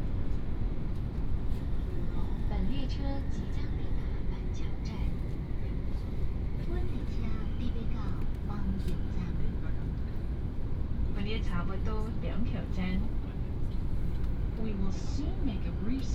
Banqiao District, New Taipei City - High - speed railway
High - speed railway, Train message broadcast